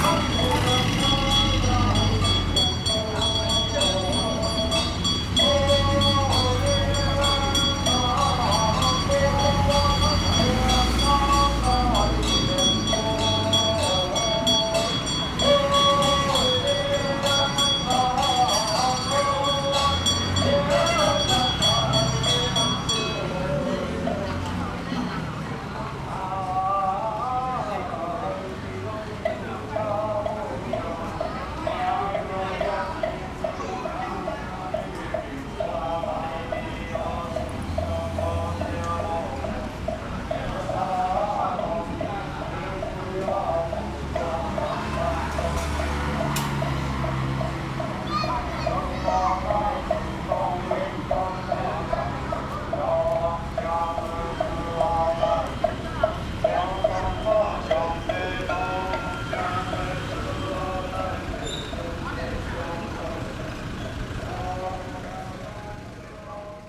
板橋農村公園, Ln., Wufeng Rd., Banqiao Dist. - Temple Ceremonies
Temple Ceremonies
Rode NT4+Zoom H4n